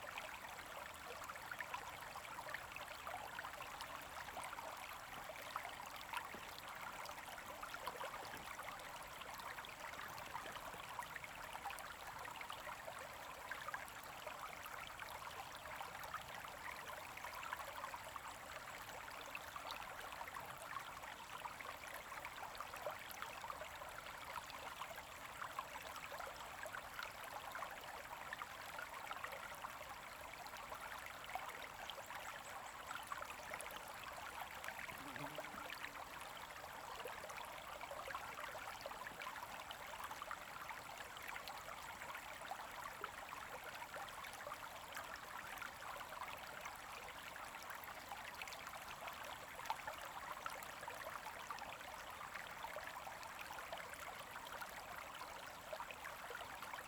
乾溪, Puli Township, Nantou County - Small streams
Stream sound, Small streams
Zoom H2n MS+ XY
Nantou County, Taiwan, 26 April 2016